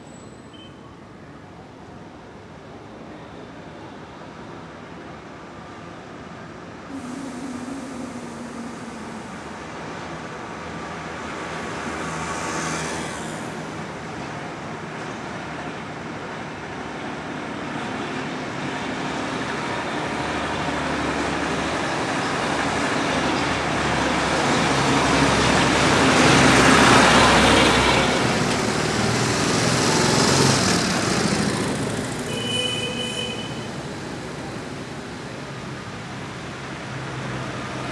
Santiago de Cuba, calle Aguilera, traffic
10 December 2003, 17:20